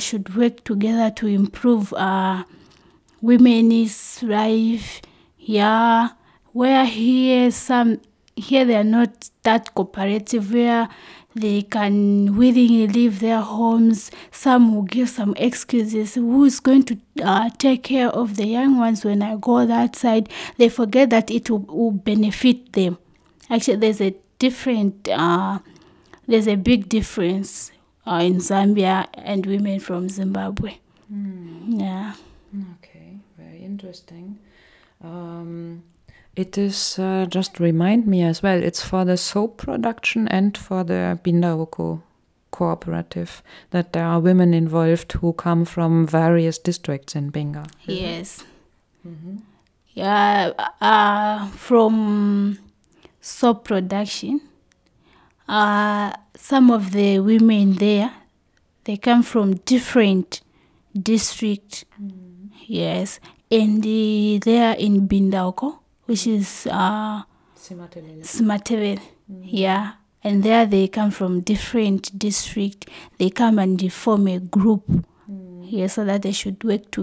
Lake View, Sinazongwe, Zambia - Theres a big difference for the women across the lake...
The interview with Nosiku Mundia was recorded shortly after her return from Binga where she accompanied Maria Ntandiyana and Cleopatra Nchite, two representatives of Sinazongwe women clubs on a visit to the women’s organisation Zubo Trust. Nosiku is still excited. As for the other two women, it was her very first international journey into unknown territory... in the interview, Nosiko reflects on her role as the record-keeper, the one who documents the event in service for the others to assist memory and for those back home so even they may learn by listening to the recordings. Here i ask her about any differences in the lives of women she may have noticed...
the entire interview with Nosiku is archived here: